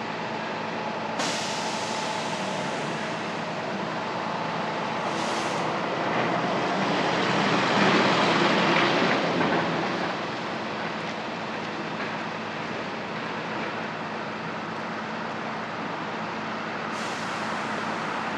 Recording at the corner of Crescent St and Saint-Catherine St. At this hour there would be a larger number of commuters heading in different directions to get to work or head to school. Yet, we hear little amounts of vehicles and pedestrians on their morning journey due to many of the workplace establishments being shut down during lockdown. We hear only a few instances of what is left of morning routines for Downtown Montreal.
Québec, Canada